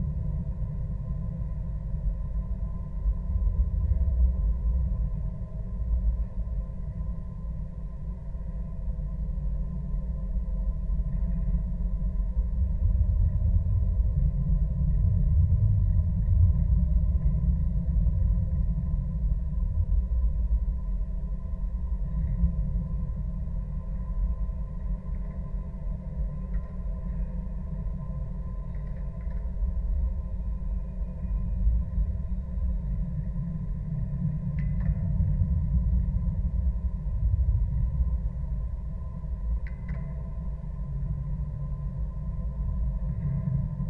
Las Palmas, Gran Canaria, support wire
contact microphones on bus station's support wire